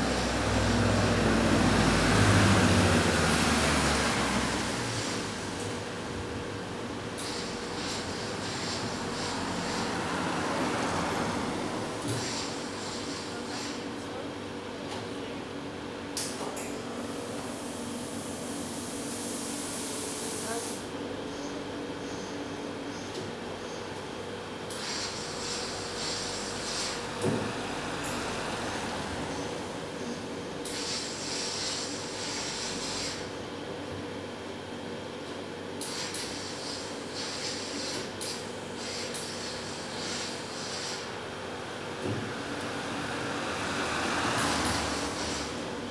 Porto, Rua de Miguel Bombarda - lavandaria olimpica
at the door of a laundry business. small room, a table for taking orders, one old, run-down, commercial washing machine doing it's cycle, puffing and steaming.